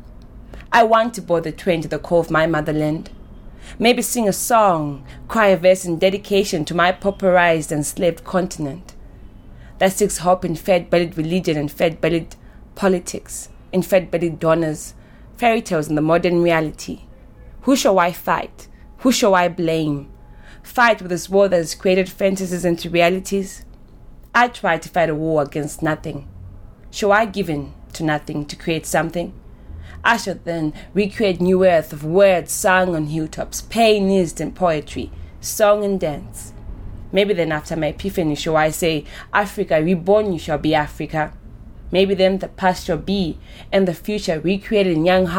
The Book Cafe, Harare, Zimbabwe - Barbra Breeze Anderson - Sorrow...
19 October 2012, ~17:00